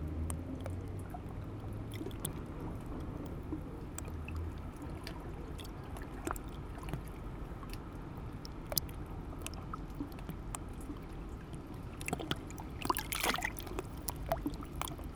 Rixensart, Belgique - Lapping on the lake
Small lapping on the surface of the Genval lake.
4 September 2016, 16:15